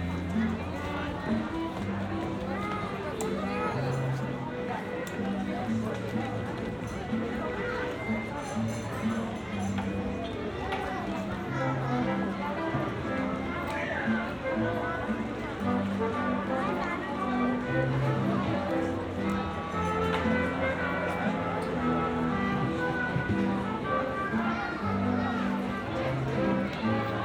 Nachbarschaftshaus Kreuzberg, Berlin - summer party
summer party in the garden of Nachbarschaftshaus (neighbourhood house), people of all ages from the neighbourhood gather here, the building also hosts a kindergarden. (tech: Sony PCM D50 + Primo EM172)
Berlin, Germany, June 2012